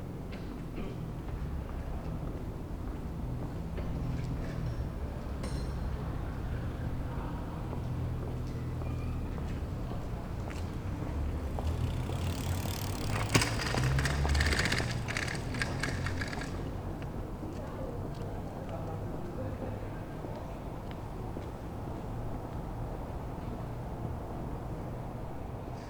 Berlin: Vermessungspunkt Friedel- / Pflügerstraße - Klangvermessung Kreuzkölln ::: 24.06.2010 ::: 01:39